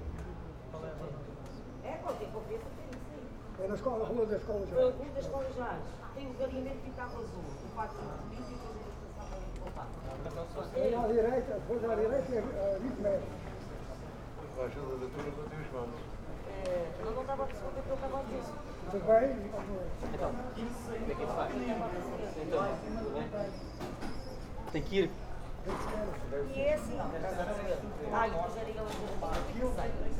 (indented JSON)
{
  "title": "Lisbon, Escolas Gerais, Calçada de São Vicente - cafe, waiting for the train",
  "date": "2010-07-03 11:50:00",
  "description": "cafe, waiting for the train, street ambience. a girl runs back and forth",
  "latitude": "38.71",
  "longitude": "-9.13",
  "altitude": "62",
  "timezone": "Europe/Lisbon"
}